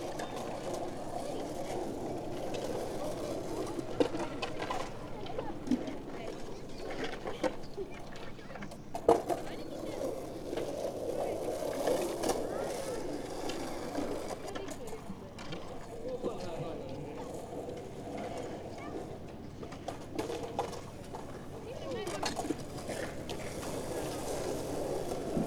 Hollihaka skatepark, Oulu, Finland - Kids skateboarding at the Hollihaka skatepark
Large amount of kids skating at a skatepark in Oulu on the first proper, warm summer weekend of 2020. Zoom H5 with default X/Y module.
Manner-Suomi, Suomi, 24 May 2020